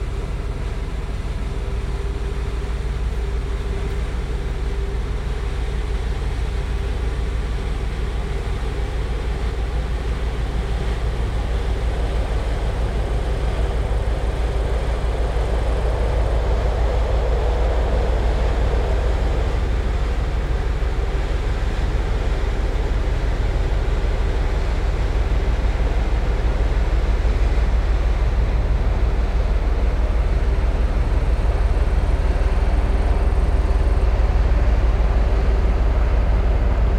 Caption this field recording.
Ship on Kiel Canal passing right to left, some birds and waves following the ship, distant train crossing a bridge, Zoom H6 recorder, MS capsule